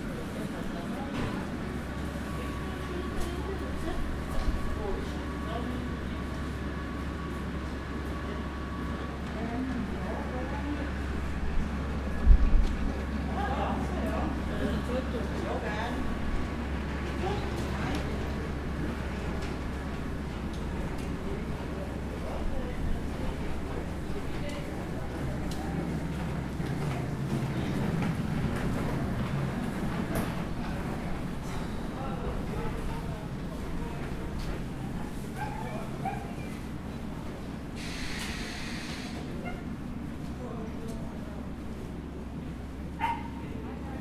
Poschiavo Bahnhof - Arrivederci
Rhätische Bahn, Weltkulturerbe, Poschiavo, Puschlav, Südbünden, Die Verabschiedung ist ciao ciao